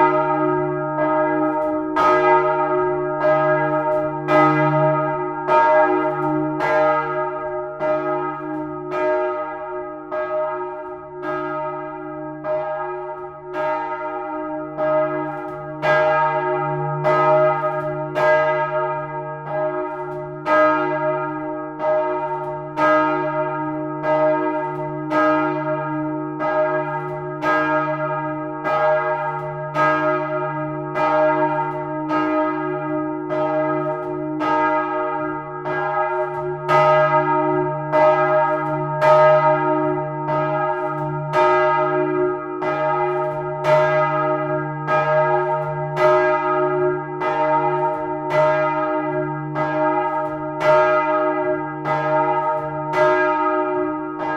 essen, old catholic church, bells - essen, friedenskirche, glocken
After listening to the ensemble of bells, you can now listen to the single bells each recorded seperately - starting with the biggest one.
Projekt - Klangpromenade Essen - topographic field recordings and social ambiences